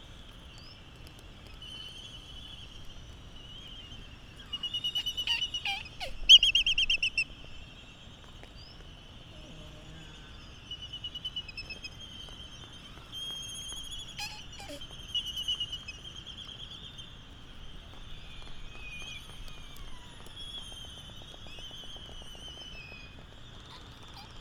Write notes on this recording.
Laysan albatross dancing ... Sand Island ... Midway Atoll ... bird calls ... Laysan albatross ... canary ... open lavaliers on mini tripod ... voices ... traffic ... doors banging ...